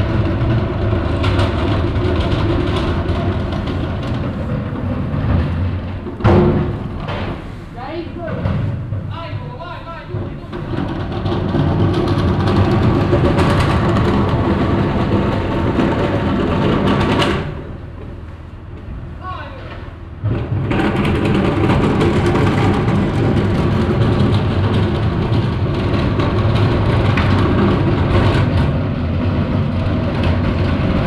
Old Town, Klausenburg, Rumänien - Cluj - Napoca - Old Town Garbage Waggons
At an old cobble stone street inside the old town of Cluj in the night time. The sound of garbage waggons being rolled from one to the other side of the street.
soundmap Cluj- topographic field recordings and social ambiences
Cluj-Napoca, Romania